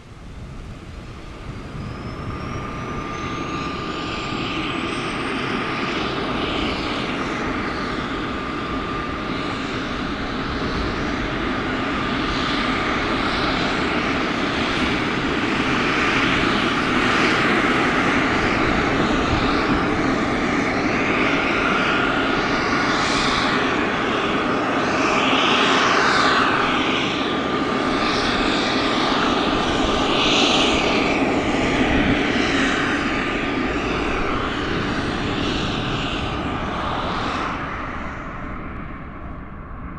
Leipzig-Halle Cargo Airport
cargo airport, Leipzig, Halle, military cargo flights, Background Listening Post